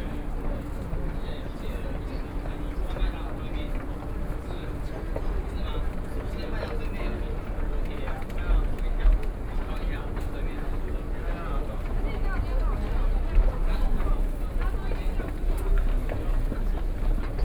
Zhongshan Dist., Taipei City - In the underground mall
Underground shopping street, From the station to department stores, Binaural recordings, Sony PCM D50 + Soundman OKM II ( SoundMap20131031- 10)